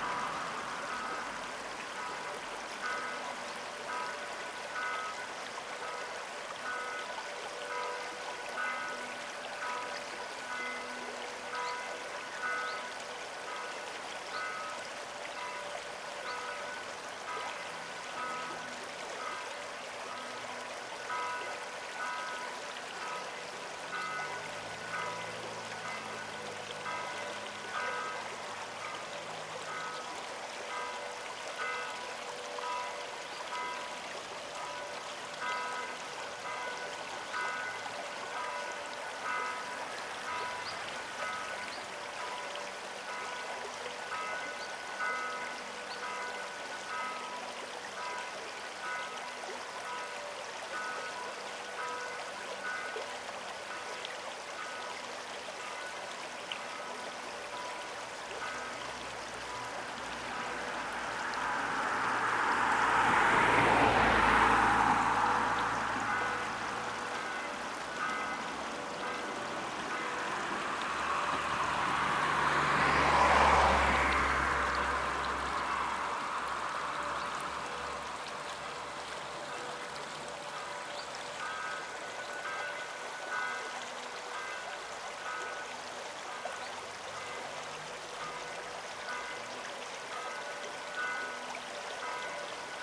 *Quasi-Binaural - best listening with headphones.
On the Ilm bridge of Bad Berka city, the river manifests its distictive baseline textures as Church bell joins in in the 49th second in the left channel. Occassional engines of cars run through the stereo space adding energy and dynamism to the soundscape.
Gear: MikroUsi Pro, my ear lobes and and ZOOM F4 Field Recorder.